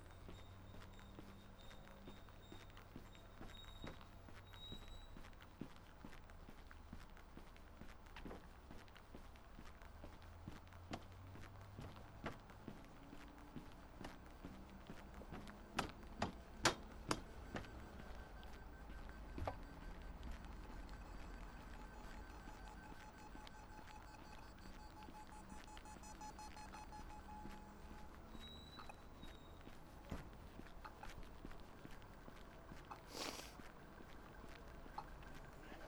Rijeka, Croatia, Sub-zero SOund Walking - Over the bridge